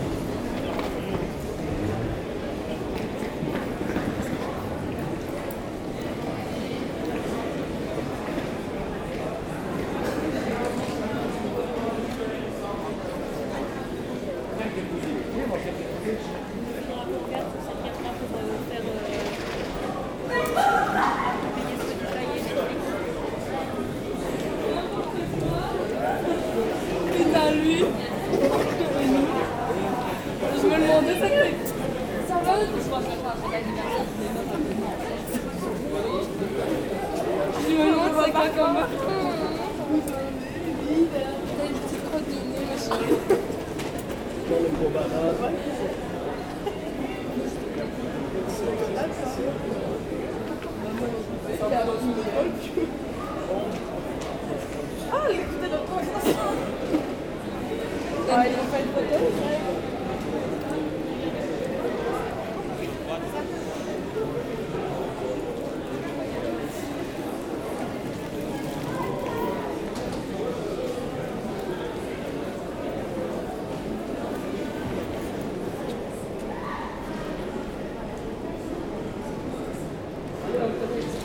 Namur station. This place is really busy. In order to represent Namur, I had the moral obligation to go there in rush hour, even if possible on a Friday evening. You can hear in this recording the pedestrian crossing, the red light, the buses, the crowd, the escalators, and then the large service corridor. On the platforms, I let several trains leave, before heading home.